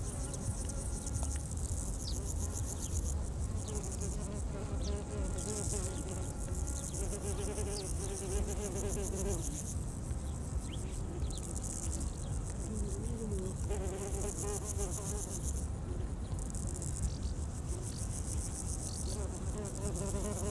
Berlin, Tempelhofer Feld - ground level ambience w/ insects dArgent
Berlin, Tempelhofer Feld, fomer airport, high grass, many insects and overall busy park with music, cyclists and pedestrians. The microphone lies on the ground, prefering the insects sound over ambience
(SD702, Audio Technica BP4025)
Deutschland, 25 July 2021